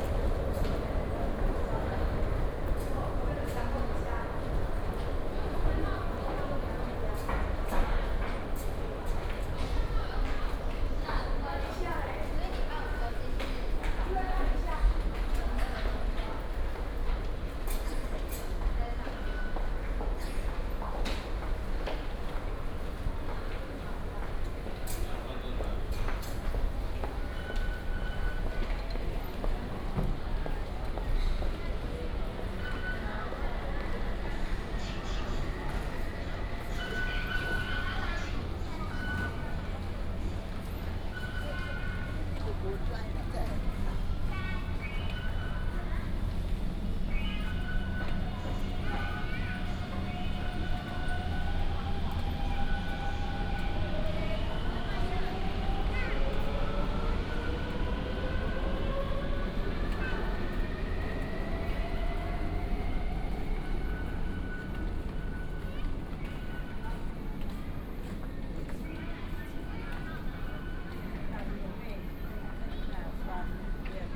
Walking in the MRT Station, Footsteps and Traffic Sound